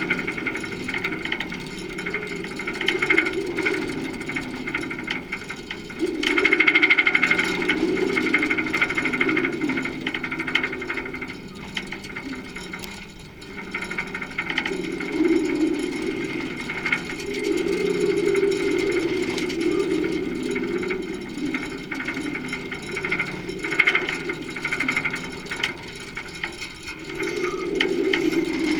contact mic on babystay
the city, the country & me: july 8, 2011
Workum, The Netherlands, 8 July, 13:43